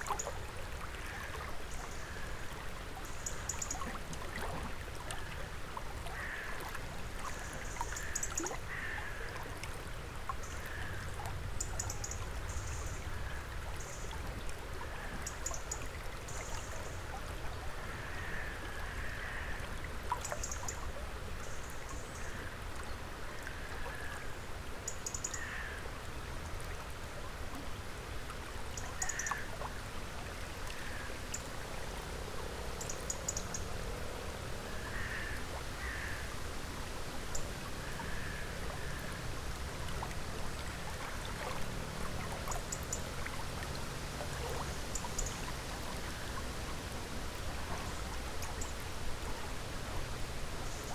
Russia, Leningrad Oblast, river Voytolovka - rivervoytolovka
Riverside of Voytolovka. Waterflow, crows and other birds, occasional trains and planes.
Recorded with Zoom H5
Leningradskaya oblast', Russia, 30 July 2017, 13:00